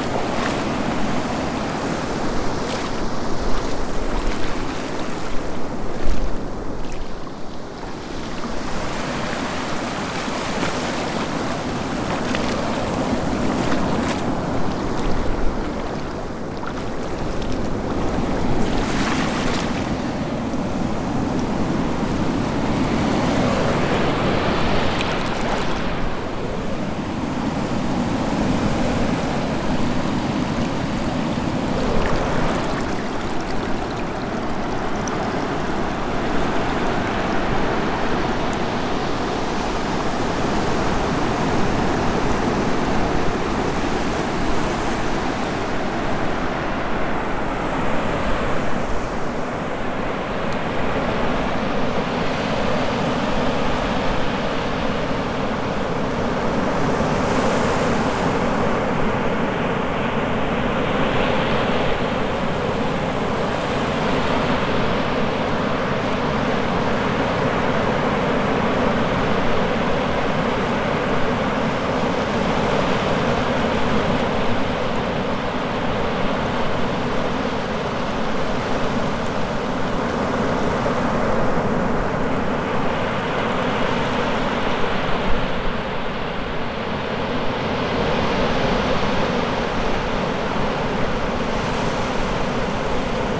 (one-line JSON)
{"title": "Garryvoe Beach, East Cork, Ireland - Tide Coming In, Slowly.", "date": "2019-01-04 12:57:00", "description": "Recorded using Tascam DR-05 inbuilt microphone. Standing in shallow water while the tide decides whether to go in or out.", "latitude": "51.86", "longitude": "-7.99", "timezone": "Europe/Dublin"}